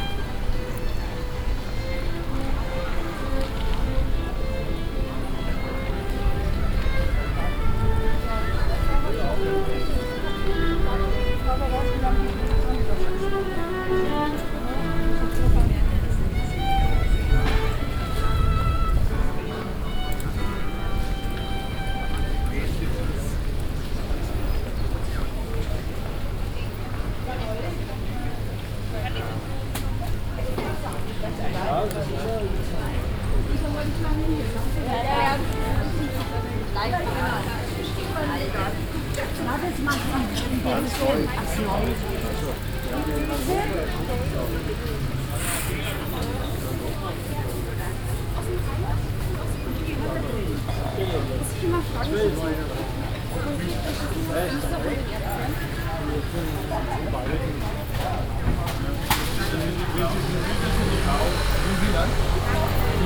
walking on the market, fountain, street musicians
olympus ls-5; soundman okm II